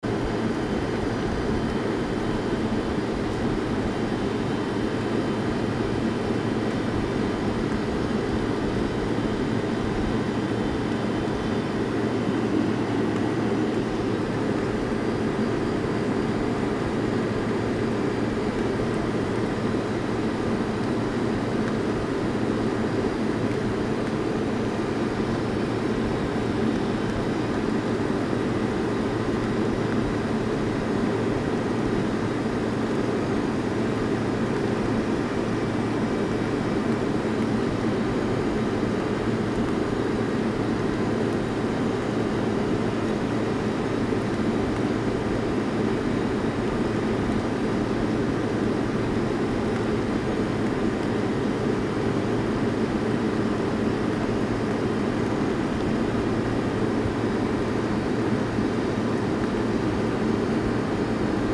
Walking Festival of Sound
13 October 2019
Air vent outside Cluny Bar